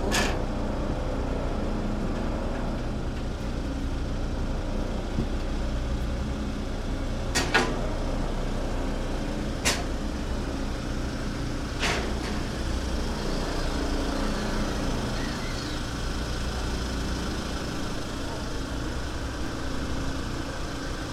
Dresden Fährstelle Kleinzschachwitz, Dresden, Deutschland - Car and passenger ferry Pillnitz
Car and passenger ferry Pillnitz
with Olympus L11 recorded